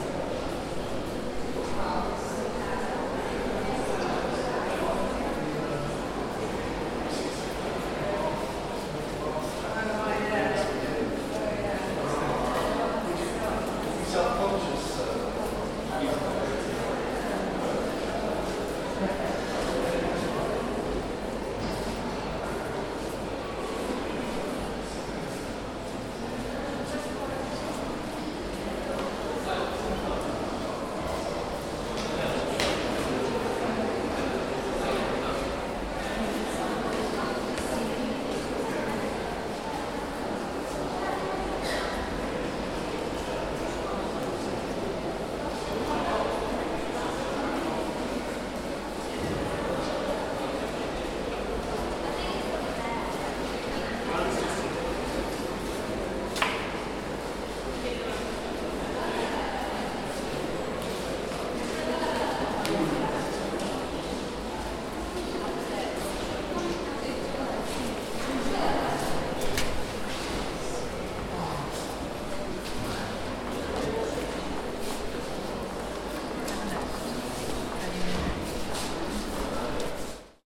Sitting on the benches on the ground floor of the stairwell to Level 3, outside 'Samson and the Philistines'.
Tascam DR-40 with internal mics, X position